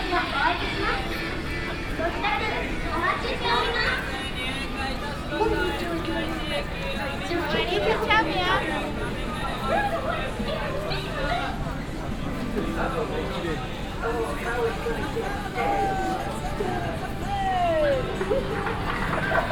tokyo, akihabara, street atmosphere
maid and manga action on the streets trying to get customers for their shops and/or cafes
international city scapes - social ambiences and topographic field recordings